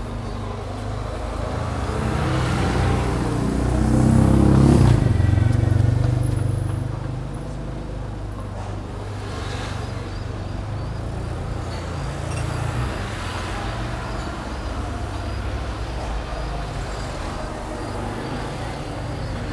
Rue de La Jonquiere, Paris - Le Voltigeur Cafe
Sitting outside the cafe, DR40 resting on an ash tray, with the on-board mics capturing the bustle of people passing.